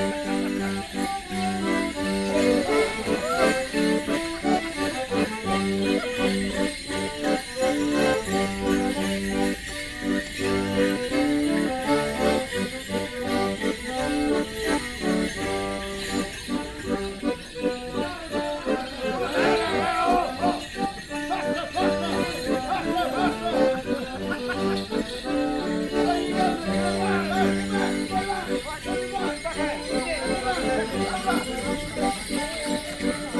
Goring Heath Almshouses, Reading, UK - Kennet Morris Men performing a one man jig
This is the sound of the Kennet Morris Men performing a one man jig at the Goring Heath Almshouses as part of their May Morning celebrations. This Morris side have been performing here for sixty years.
1 May